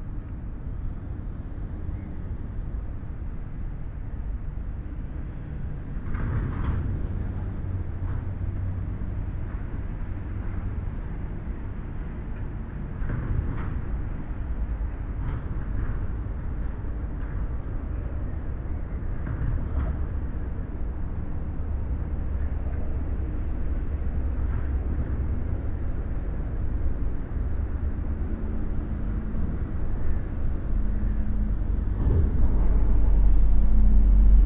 Mühlauhafen, Mannheim, Deutschland - Saturday morning in the harbour
A comparatively quit morning in the container harbour. Large container-harbour crane and associated machinery moving on the opposite side of the harbour basin. Containers being hauled on ships.The crane moving up and down along the quay. To the right motor vehicles passing over a bridge. Calls of Common Black-headed Gulls (Chroicocephalus ridibundus) can be heard calling as they fly around in the harbour. At 4 min in the recording the call of a Grey Heron (Ardea cinerea) and at 4 min 05 sec, the flight call of a migrating Tree Pipit (Anthus trivialis). Recorded with a Sound Devices 702 field recorder and a modified Crown - SASS setup incorporating two Sennheiser mkh 20